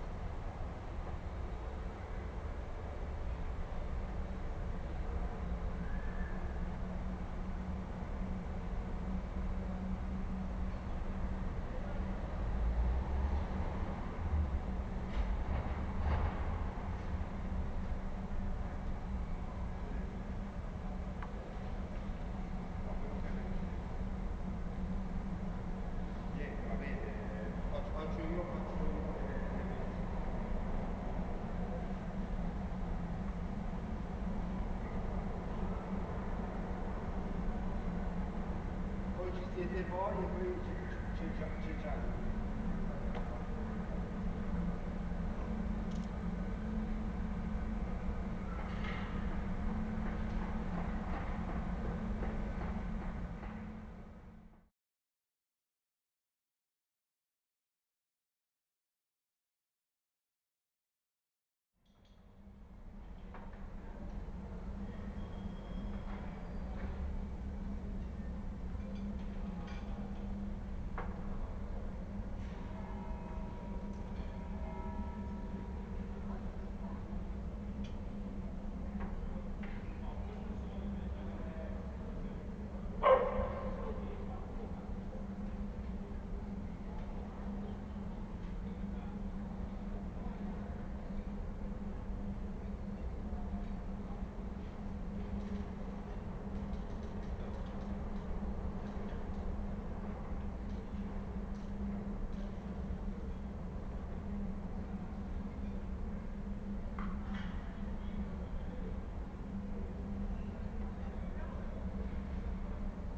"Five ambiances in the time of COVID19" Soundscape
Chapter XXXVII of Ascolto il tuo cuore, città. I listen to your heart, city
Wednesday April 8 2020. Fixed position on an internal terrace at San Salvario district Turin, twenty nine days after emergency disposition due to the epidemic of COVID19.
Five recording realized at 8:00 a.m., 11:00 a.m., 2:00 p.m., 5:00 p.m. and 8:00 p.m. each one of 4’33”, in the frame of the project Les ambiances des espaces publics en temps de Coronavirus et de confinement, CRESSON-Grenoble research activity.
The five audio samplings are assembled here in a single audio file in chronological sequence, separated by 7'' of silence. Total duration: 23’13”